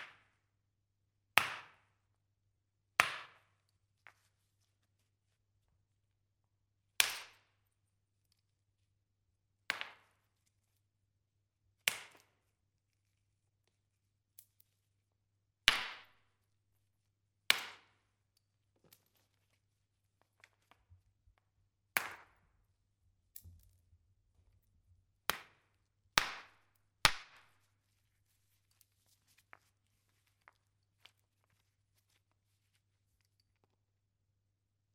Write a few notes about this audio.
klänge in der steinzeitwerkstatt des museums neandertal - hier: kieselsteine und feuersteine, soundmap nrw: social ambiences/ listen to the people - in & outdoor nearfield recordings, listen to the people